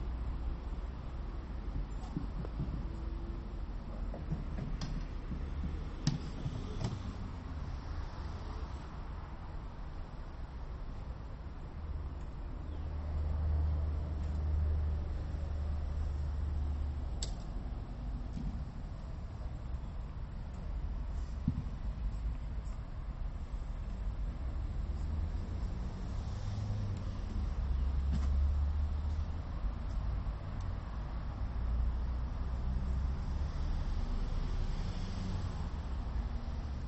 Av. do Brasil, Lisboa, Portugal - Aquilino Ribeiro Machado Garden
These recordings are intended to compare recreational spaces within the city.
3 December 2018, 10:00